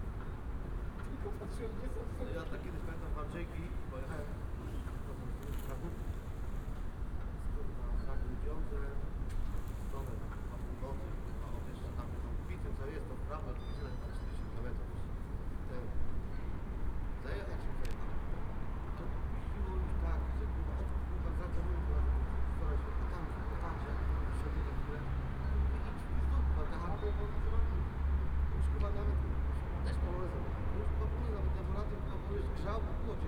Poznan, Lazarz district, on the corner in front of the old train station - taxi drivers
(binaural) taxi drivers talking their taxi stories and stamping their feet in the cold. some night traffic. a few steel wires clinging on the poles. announcements from train station's megaphones. rumble of the nearby escalator.
Poznań, Poland, 28 November 2014